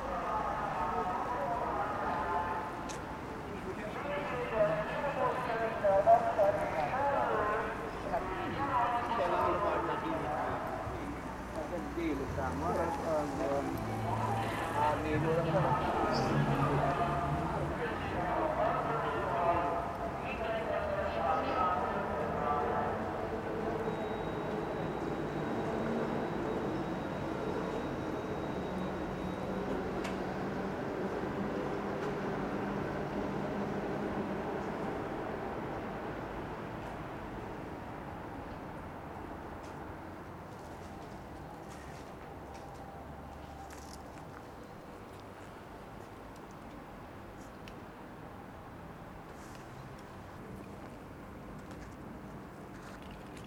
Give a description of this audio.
A little red car packed with fruit and vegetables arrives at the foot of a monolithic soviet era residence building. Two men get out, one prepares to display the goods, the other walks up and down advertising what is on sale with the help of a megophone, making his voice be carried to the upmost storeys.